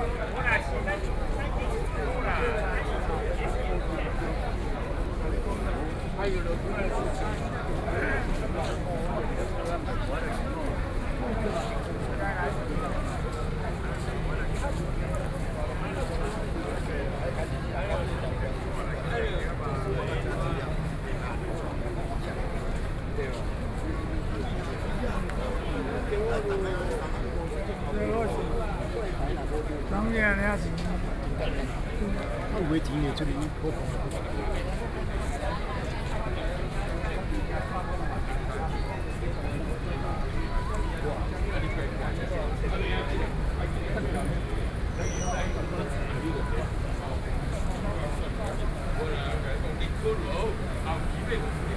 Guangzhou St., Wanhua Dist., Taipei City - in the square